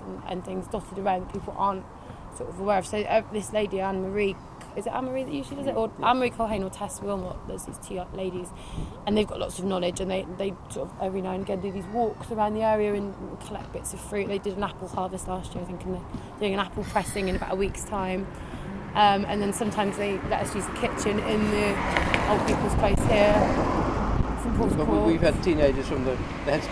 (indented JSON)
{
  "title": "Efford Walk Two: Wild food in Efford - Wild food in Efford",
  "date": "2010-09-24 17:40:00",
  "latitude": "50.39",
  "longitude": "-4.11",
  "altitude": "86",
  "timezone": "Europe/London"
}